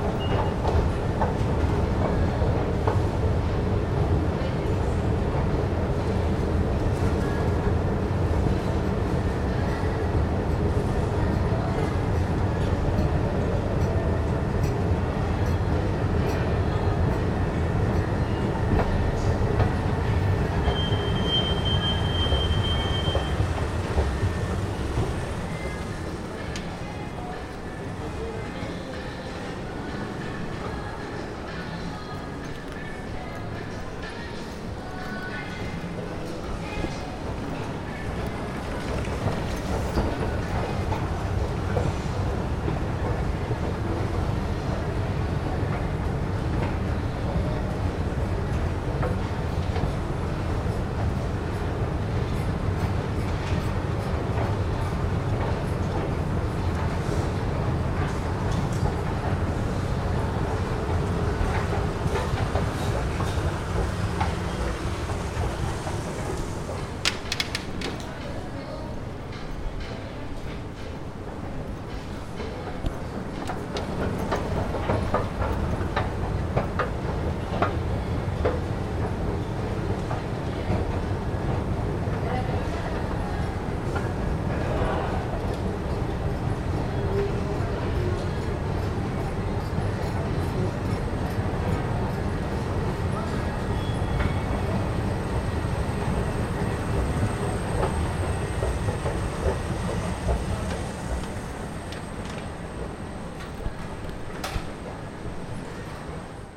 Boulevard Michelet, Marseille, France - Take a three-story escalator to the Galeries Lafayettes at Prado Marseille shopping center.
Electromechanical sound, background music, voice, alarm, 9 KHz at the end.
France métropolitaine, France, 28 July 2020